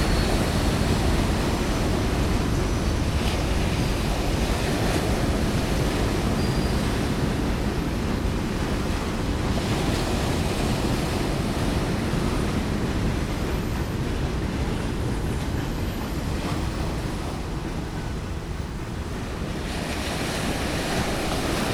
Сквер им. Стальского, Махачкала, Респ. Дагестан, Россия - Caspian Sea
Beach "Moon Coast"